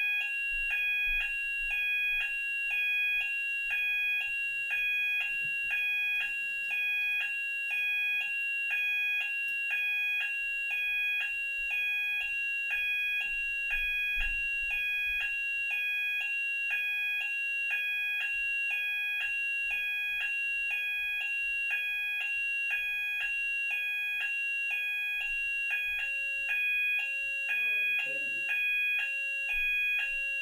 Dumfries, UK - fire alarm ...
fire alarm ... dpa 4060s in parabolic to mixpre3 ... best part of two hours before it was silenced ...